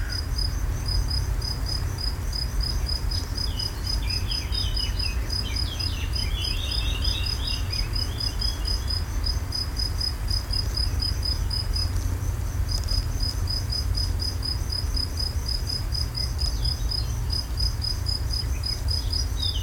Maribor, Slovenia - petra's tree
insects and ambience on a hot afternoon in petra's favorite spot in maribor